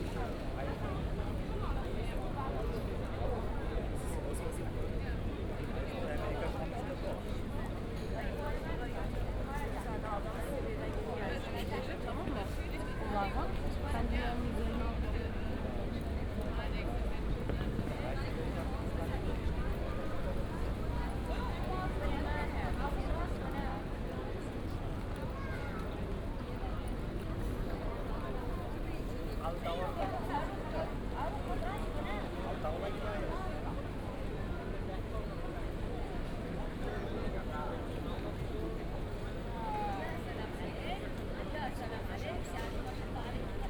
Willy-Brandt-Platz, Essen - square ambience
outside of a cafe at Willy-Brandt-Platz, near main station, Essen
(Sony PCM D50, OKM2)